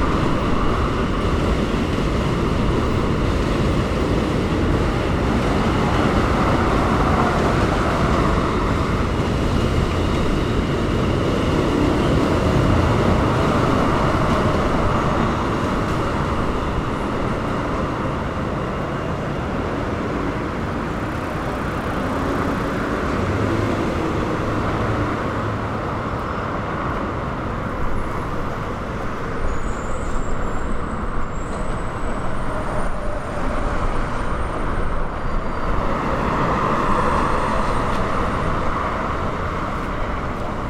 Williamsburg Bridge Path, New York, NY, USA - Williamsburg Bridge Path
Recorded at the Williamsburg Bridge Path.
Zoom H6
9 August 2019, 14:50